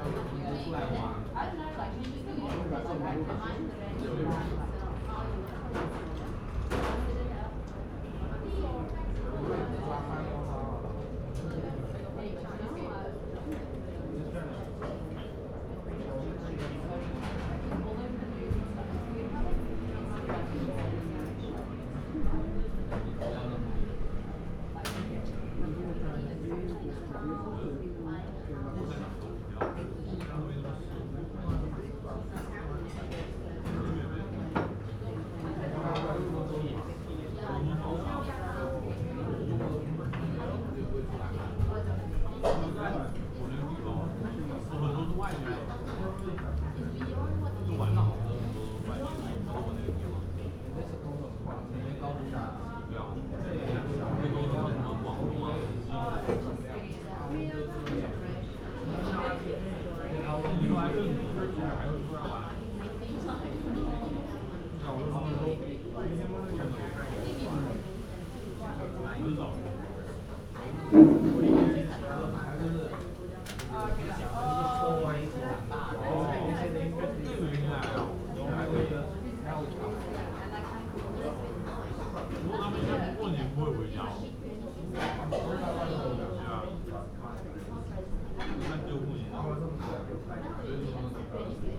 Haymarket NSW, Australia - Chinese dumpling restaurant
Sitting down to a lunch of some dumplings. Recorded with Olympus LS-12